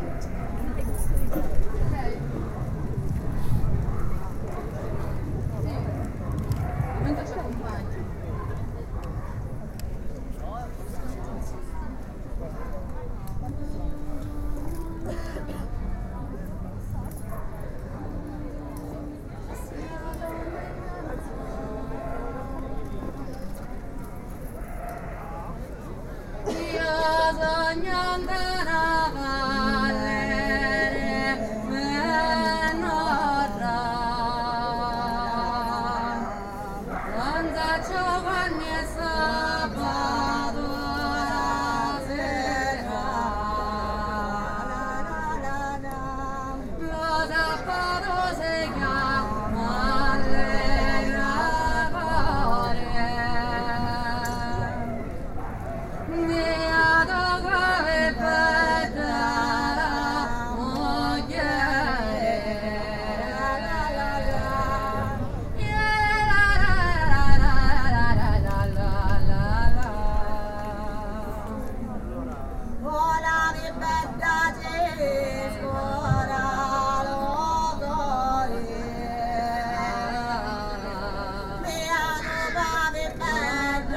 piazza Teatro Massimo Palermo (romansound) 6/2/10 h 19,15
Matilde Politti Simona di Gregorio - antichi canti femminili siciliani (edirol r-09hr)